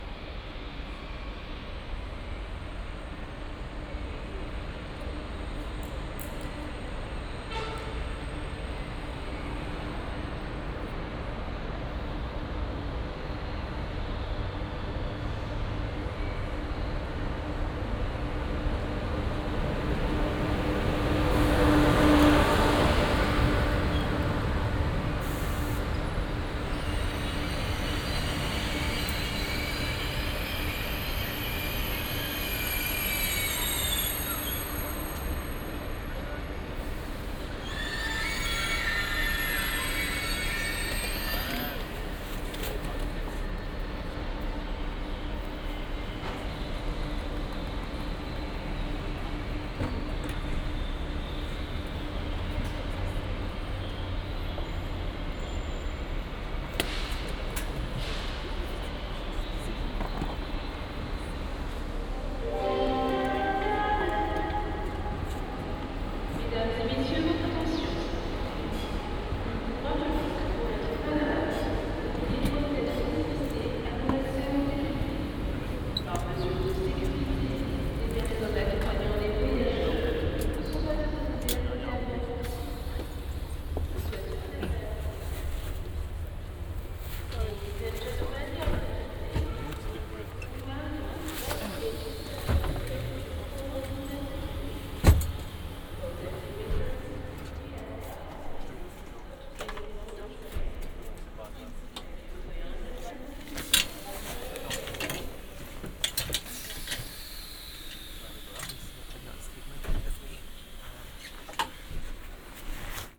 Gare Aéroport Charles De Gaulle 2 - TGV - destination Strasbourg
Train arrives at the Charles De Gaulle Airport train station... on the way to Strasbourg...
11 November, ~12:00